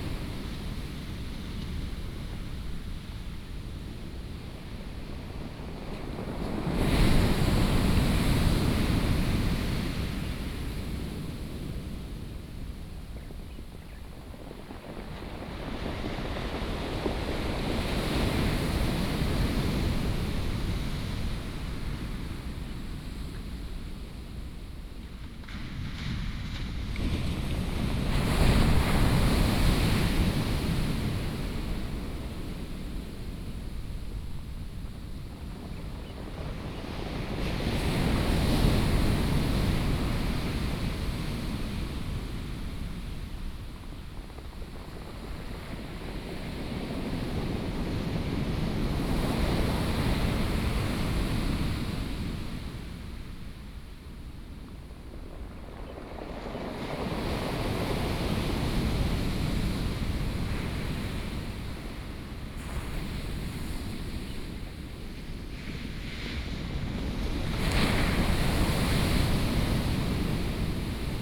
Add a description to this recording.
Sound of the waves, Binaural recordings, Sony PCM D100+ Soundman OKM II